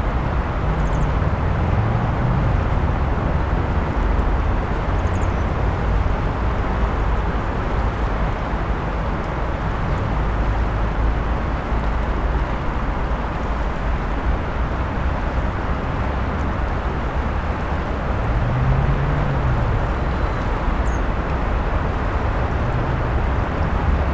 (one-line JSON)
{"title": "Innsbruck, Waltherpark am Inn Österreich - Frühling am Inn", "date": "2017-03-12 14:50:00", "description": "waltherpark, vogelweide, fm vogel, bird lab mapping waltherpark realities experiment III, soundscapes, wiese, parkfeelin, tyrol, austria, walther, park, vogel, weide, fluss, vogel, wasser, inn, wind in blättern, flussgeräuschanpruggen, st.", "latitude": "47.27", "longitude": "11.39", "altitude": "575", "timezone": "Europe/Vienna"}